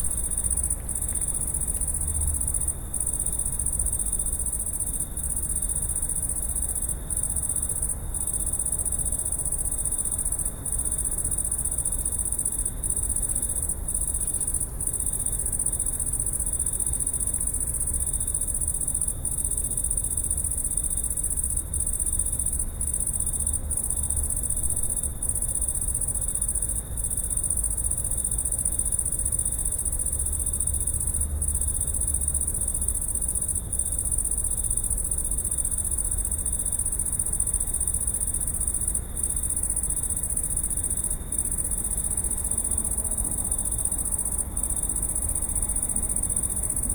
and night creature sounding dry leaves behind walnut tree
Maribor, Slovenia, 8 August 2013